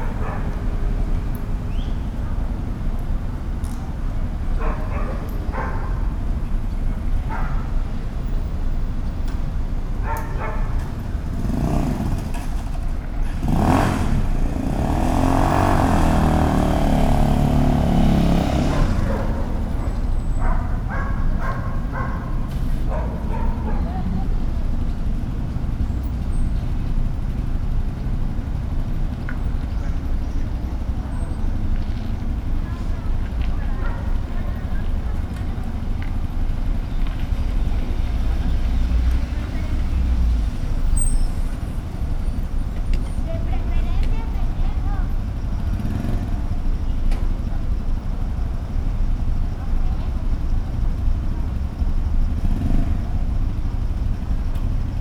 Av. Panorama, Valle del Campestre, León, Gto., Mexico - At Panorama Park.
I made this recording on September 9th, 2021, at 8:17 p.m.
I used a Tascam DR-05X with its built-in microphones and a Tascam WS-11 windshield.
Original Recording:
Type: Stereo
En el Parque de Panorama.
Esta grabación la hice el 9 de septiembre de 2021 a las 20:17 horas.
Guanajuato, México, 9 September, 20:17